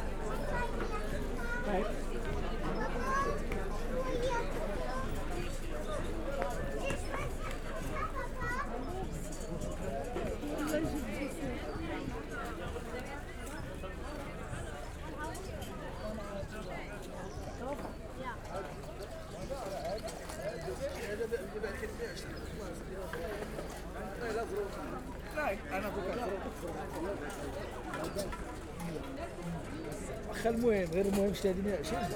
Rahba Kedima, Marrakesh, Marokko - market walk
Marrakesh, Median, walk over market area at Rahba Kedima
(Sony D50, DPA4060)
Marrakesh, Morocco, 25 February 2014